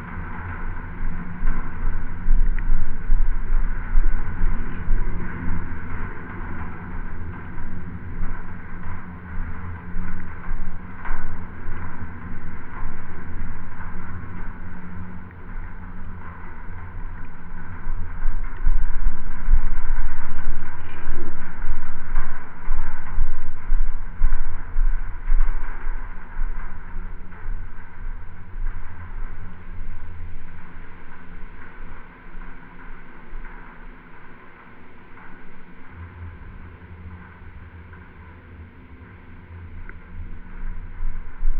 Antalgė, Lithuania, sculpture Pegasus
Open air sculpture park in Antalge village. There is a large exposition of metal sculptures and instaliations. Now you can visit and listen art. Recorded with geophone and hydrophone used as contact sensor.